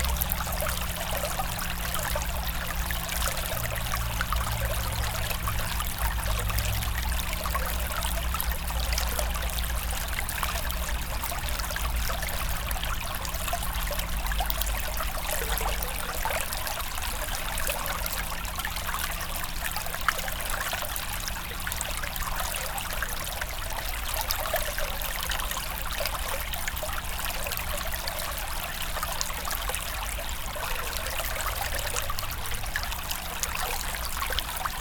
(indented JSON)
{
  "title": "Court-St.-Étienne, Belgique - A small river",
  "date": "2015-10-02 07:30:00",
  "description": "A small river, called Ry Angon.",
  "latitude": "50.65",
  "longitude": "4.59",
  "altitude": "96",
  "timezone": "Europe/Brussels"
}